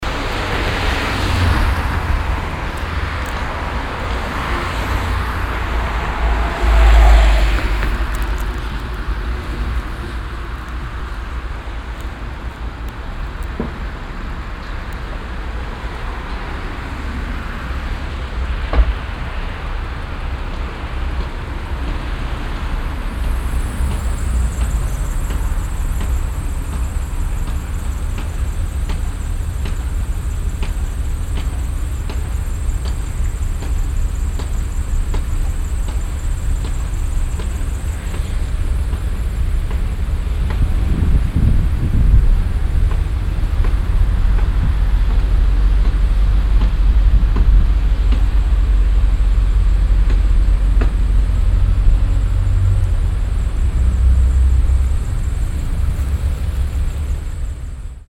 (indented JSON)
{
  "title": "cologne, rothgerberbach, verkehr an ampelkreuzung",
  "date": "2008-09-07 11:01:00",
  "description": "verkehr mittags im intervall der ampelanlage an grosser strassenkreuzung\nsoundmap nrw - social ambiences - sound in public spaces - in & outdoor nearfield recordings",
  "latitude": "50.93",
  "longitude": "6.95",
  "altitude": "53",
  "timezone": "Europe/Berlin"
}